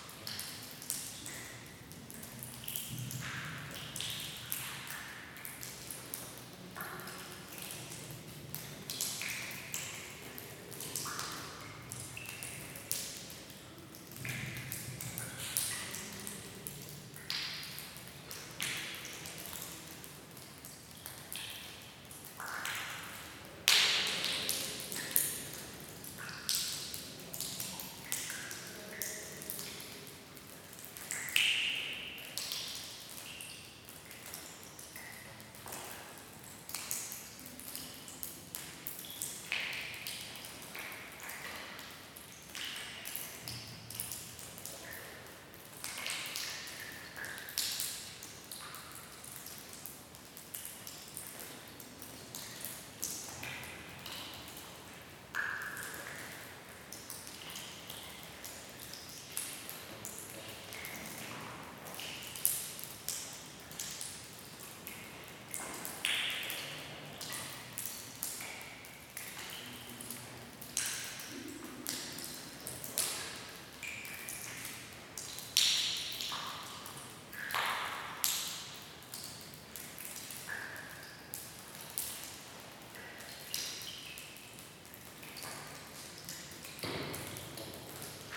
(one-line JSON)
{"title": "Hotel Abandonado, Portugal - Water dripping in hotel abandonado", "date": "2019-04-23 15:21:00", "description": "In a cement room in the basement of the abandoned 'Hotel Monte Palace', water dripping from the ceiling into the puddle on the floor. You can also hear vehicles on the road outside and the distant voices of other people exploring.\nZoom H2n XY mics.", "latitude": "37.84", "longitude": "-25.79", "altitude": "562", "timezone": "GMT+1"}